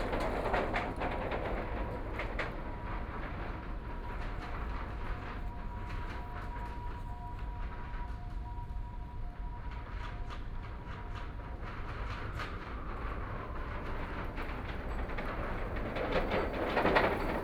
淡水區, New Taipei City - Bicycle
Riding bikes on the wooden floor, MRT trains
Please turn up the volume a little. Binaural recordings, Sony PCM D100+ Soundman OKM II
Danshui District, 竿蓁林, 5 April 2014